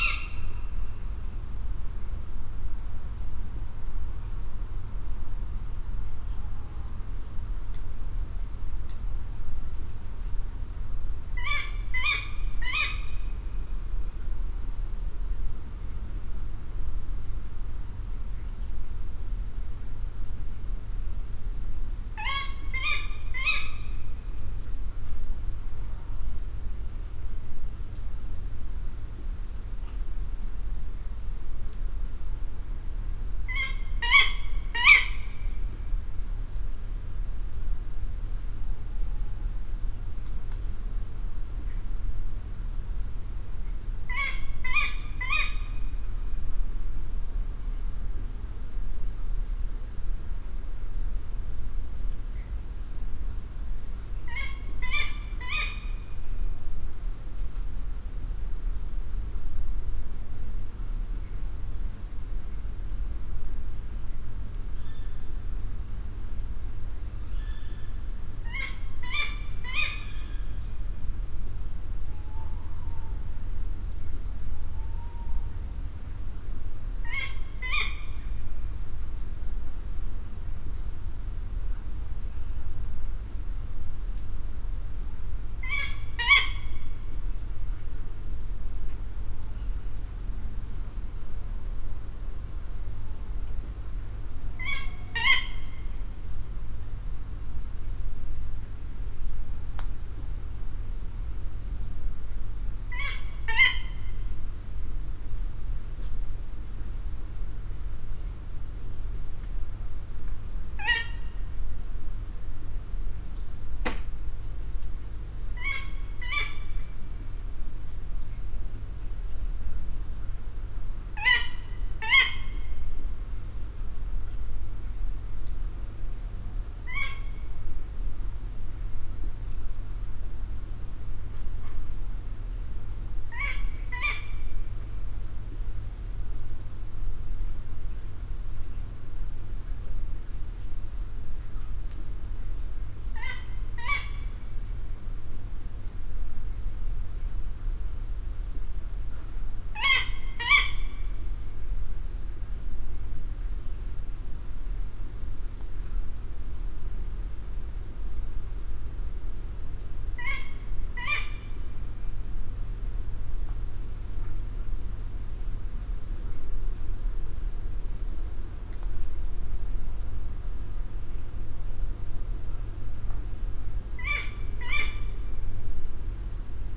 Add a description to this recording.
Heard during an all night recording. The owl seems to be flying from tree to tree. recorded with a Mix Pre 6 II and 2 Sennheiser MKH 8020s